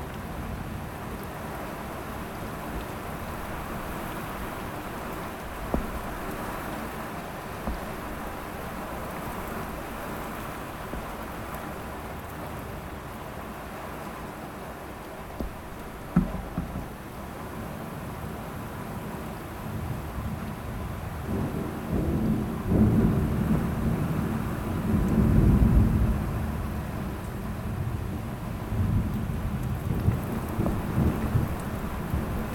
{"title": "Ave, Ridgewood, NY, USA - Severe Thunderstorm, NYC", "date": "2018-08-07 19:50:00", "description": "Recording of the severe thunderstorm that hit NYC after a hot and humid afternoon.\nContact mic placed on the apartment window + Zoom H6", "latitude": "40.70", "longitude": "-73.90", "altitude": "28", "timezone": "GMT+1"}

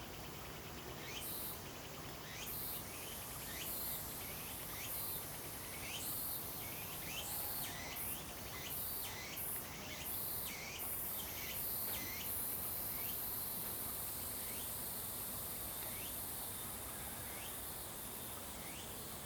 {"title": "Woody House, 桃米里 Puli Township, Nantou County - Birds singing", "date": "2015-08-26 15:22:00", "description": "Birds singing, Cicadas cry, Frog calls\nZoom H2n MS+XY", "latitude": "23.94", "longitude": "120.92", "altitude": "495", "timezone": "Asia/Taipei"}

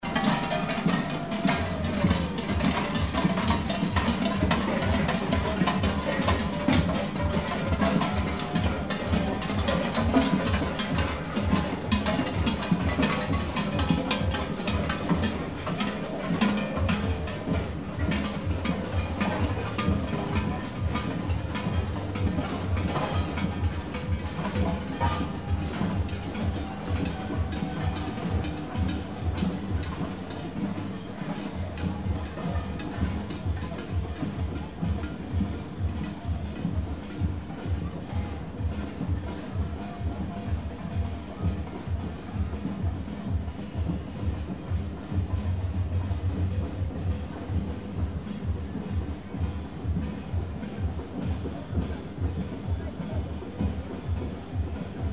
{"date": "2008-08-10 18:30:00", "description": "Summer Carnival in Fuzine town, mountain region Gorski kotar in Croatia. You can hear a bell ringers on this recording.", "latitude": "45.31", "longitude": "14.71", "altitude": "727", "timezone": "Europe/Zagreb"}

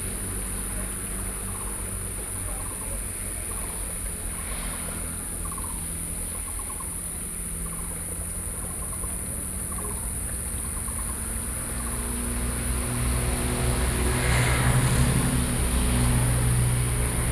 {"title": "感天宮, Baiji road, Sanxia Dist. - In the Temple Square", "date": "2012-07-08 09:16:00", "description": "In the Temple Square, Fountain, Bird calls, Cicadas cry, Traffic Sound\nBinaural recordings, Sony PCM D50", "latitude": "24.91", "longitude": "121.38", "altitude": "84", "timezone": "Asia/Taipei"}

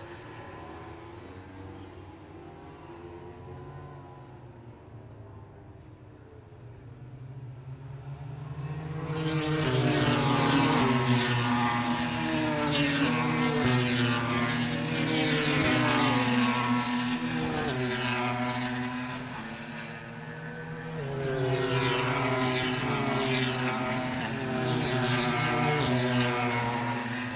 {"title": "Towcester, UK - british motorcycle grand prix 2022 ... moto grand prix ...", "date": "2022-08-05 09:55:00", "description": "british motorcycle grand prix 2022 ... moto grand prix free practice one ... dpa 4060s clipped to bag to zoom h5 ... wellington straight adjacent to practice start ...", "latitude": "52.07", "longitude": "-1.01", "altitude": "157", "timezone": "Europe/London"}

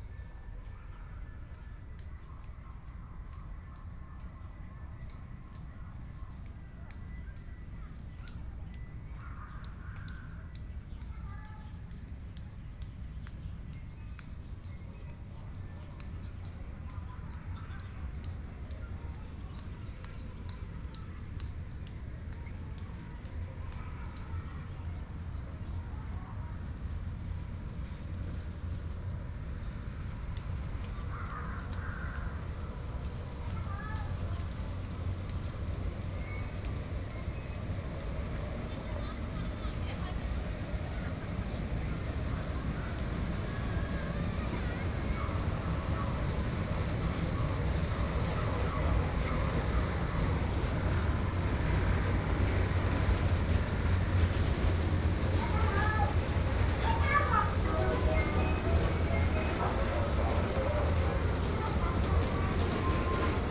{"title": "Yangpu Park, Yangpu District - soundwalk", "date": "2013-11-26 11:54:00", "description": "Walking through the park, From the plaza area to play area, Binaural recording, Zoom H6+ Soundman OKM II", "latitude": "31.28", "longitude": "121.53", "altitude": "1", "timezone": "Asia/Shanghai"}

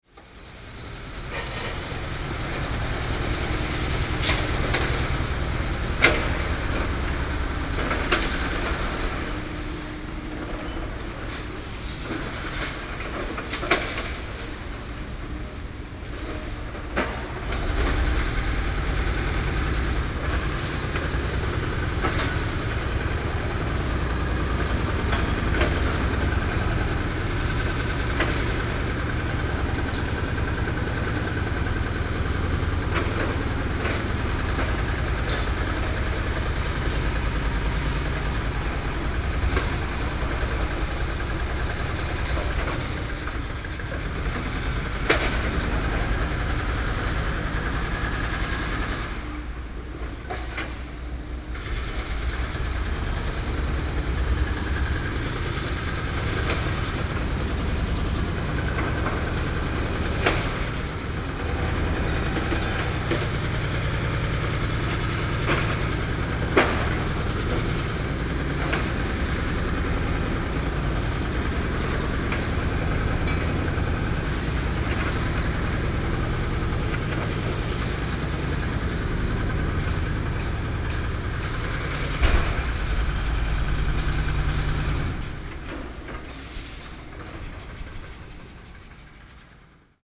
{"title": "Yancheng, Kaohsiung - Elementary School", "date": "2013-04-12 09:43:00", "description": "outside of the Elementary School, Removal of school buildings, Sony PCM D50 + Soundman OKM II", "latitude": "22.62", "longitude": "120.28", "altitude": "7", "timezone": "Asia/Taipei"}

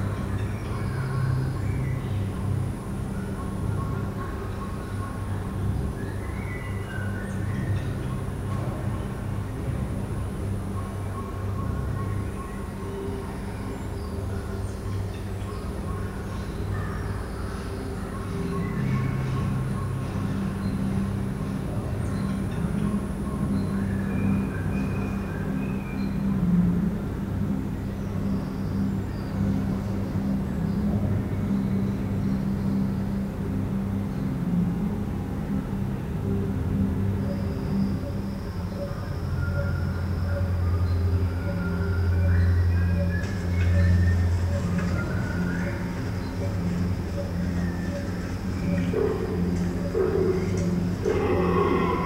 {"title": "erkrath, neandertal, museum - mettmann, neandertal, museum", "description": "soundmap: mettmann/ nrw\nindoor soundinstallation, exponat bescgallung, ambiente im naturhistorischen museum neandertal\nproject: social ambiences/ listen to the people - in & outdoor nearfield recordings", "latitude": "51.23", "longitude": "6.95", "altitude": "88", "timezone": "GMT+1"}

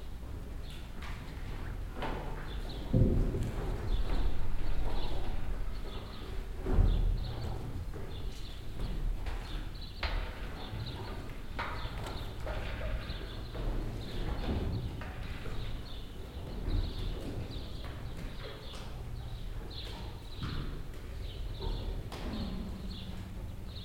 {"title": "tandel, bull shed", "date": "2011-08-09 23:45:00", "description": "Inside a bull shed. The calls of the bulls and their nervous movements. The voice of the farmer trying to calm them down.\nTandel, Rinderschuppen\nIn einem Rinderschuppen. Die Rufe von Rindern und ihre nervösen Bewegungen. Die Stimme des Bauerns, der sie zu beruhigen versucht.\nTandel, étable à boeufs\nA l’intérieur d’une étable à bœufs. Les cris des bœufs et leurs mouvements de nervosité. La voix de l’éleveur tentant de les calmer.", "latitude": "49.90", "longitude": "6.18", "altitude": "237", "timezone": "Europe/Luxembourg"}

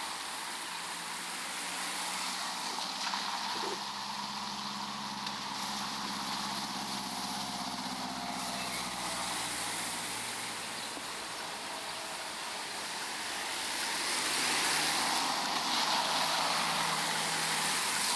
{"title": "Nova Gorica, Slovenia - pedestrians crossing road in the rain", "date": "2017-06-06 11:53:00", "latitude": "45.96", "longitude": "13.65", "altitude": "96", "timezone": "Europe/Ljubljana"}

